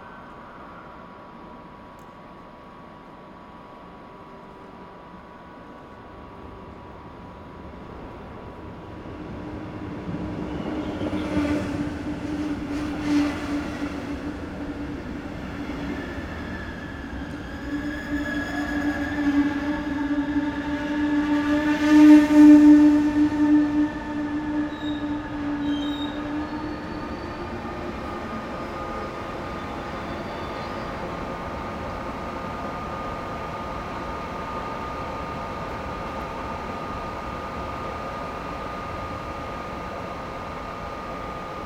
a regional train arrives and departs, and makes some musical sounds
(Sony PCM D50 internal mics)